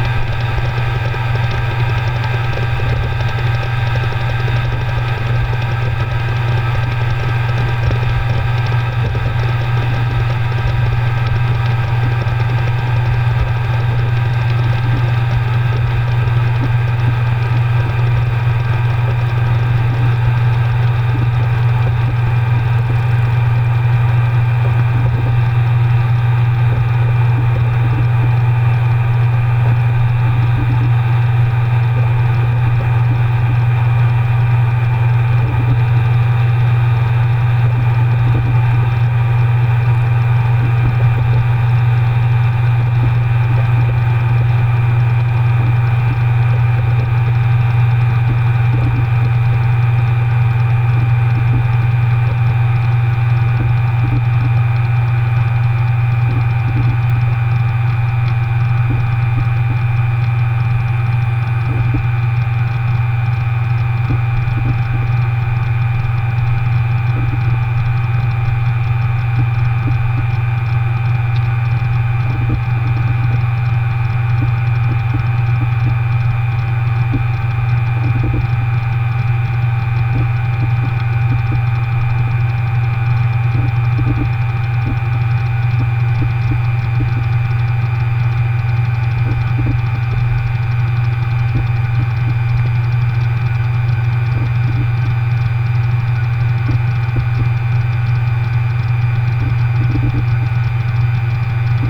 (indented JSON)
{
  "title": "c/ San Cosme y San Damián, Madrid, Spain - 2014-04-23 HDD",
  "date": "2014-04-23 22:32:00",
  "description": "I was transferring a rather large amount of data - ironically, my own\nfield recording archive - between two external hard drives when the\nhums and clicks of the drives distracted me from staring at the\nprogress bar. I noticed that the two drives were making markedly\ndifferent sounds, so I reached out for my contact mics, some masking\ntape and my recorder and started recording different takes of both.\nInitially, I thought that the recordings would make a nice sample for\nfurther processing, e.g., a granular synth in a live context, but\nreally, after listening to all the takes, I decided that they didn't\nreally need any post-processing. So this is simply a layering of\ndifferent takes from different places on the hard disk hulls, with no\nmore manipulation than a few fades.\nDetails:\nContact mics* -> Olympus\n* The contact mics I use are the fabulous ones made by Jez Riley French",
  "latitude": "40.41",
  "longitude": "-3.70",
  "altitude": "648",
  "timezone": "Europe/Madrid"
}